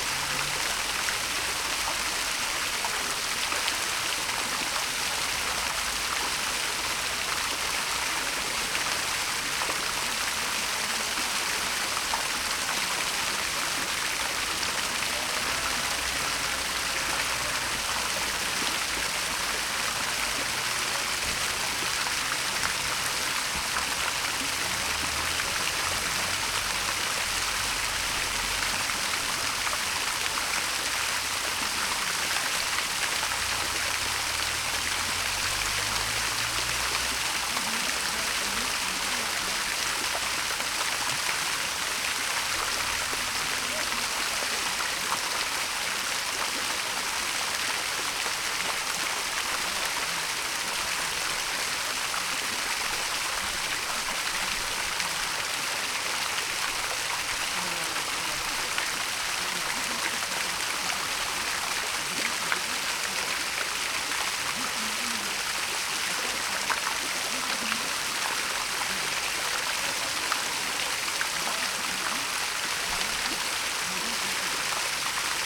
Fontaine Place de la réunion - Paris
Mise en service de la fontaine - octobre 2010
Paul-Eugène Lequeux (architecte) 1858
Albert-Ernest Carrier-Belleuse (sculpteur)
Cataloguée dans "les fontaines disparues de Paris"
Paris, France, October 2010